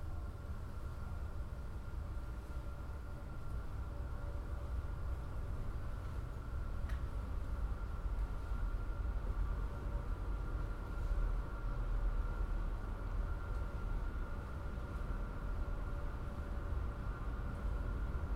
Belfast, Belfast, Reino Unido - Soundwalk in a machine room
An operating and yet quite human-emptied stokehold functioning in the basements of the engineering building at Queen's University
20 November 2013, 14:47